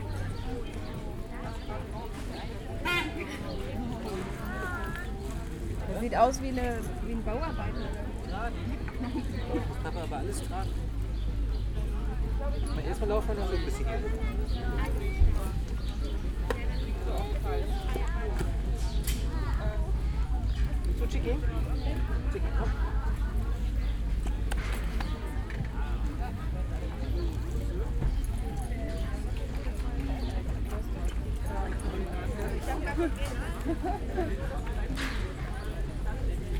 people gathering at the sunday fleemarket at Lohmühle laager
(SD702 DPA4060)